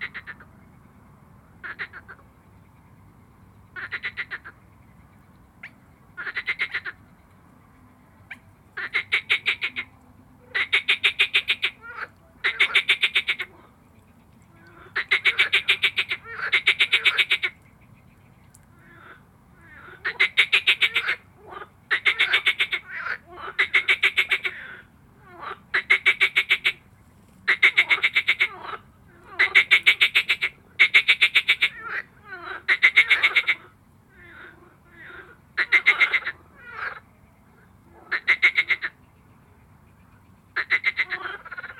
Higham Marshes, Gravesend, UK - Marsh Frogs Close Up
"Laughing" marsh frogs in breeding season, Higham Marshes, Kent, UK
South East England, England, United Kingdom, 5 June 2021